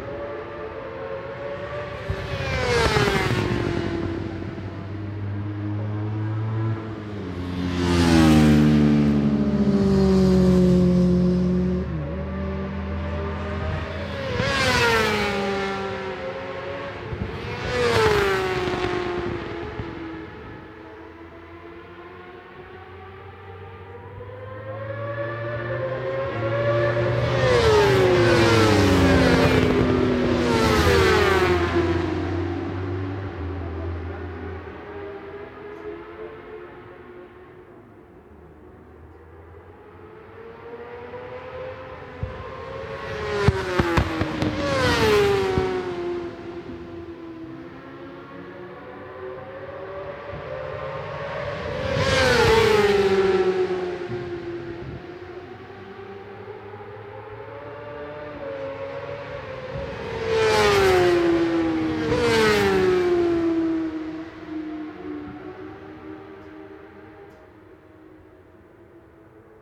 Brands Hatch GP Circuit, West Kingsdown, Longfield, UK - british superbikes 2004 ... supersports ...
british superbikes 2004 ... supersports 600s qualifying two ... one point stereo mic to minidisk ...